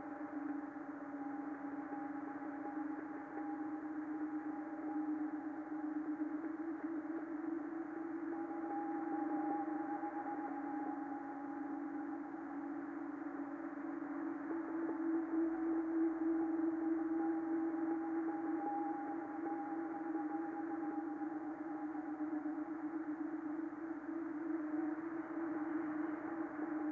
session at adhishakti residency - tubular bells on cassette
recording during a rainy week at adhishakti theater arts center - winter 2007-08